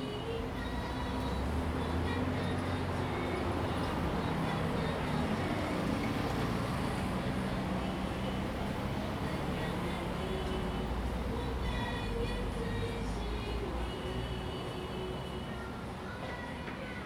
碧潭食堂, Xindian Dist., New Taipei City - In front of the restaurant
In front of the restaurant, Traffic Sound
Zoom H2n MS+ XY